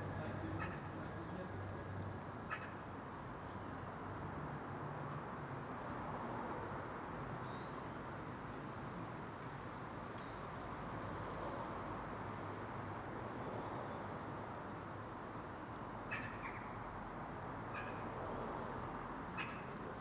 Contención Island Day 71 inner west - Walking to the sounds of Contención Island Day 71 Tuesday March 16th
The Drive
Bright sunshine dazzles and
out of the wind
warms
Blue tits explore the nest box
that hangs in the elder
Bang thud tinkle
builders come and go
and windchimes
England, United Kingdom, 16 March 2021